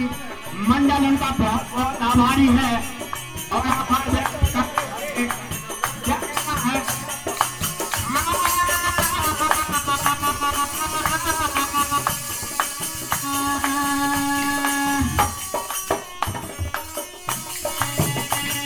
Pachmarhi, Madhya Pradesh, Inde - Hindus singing a pray
In the end of afternoon, a group of men sings in a very small temple.
Pachmarhi, Madhya Pradesh, India, 18 October 2015, 17:03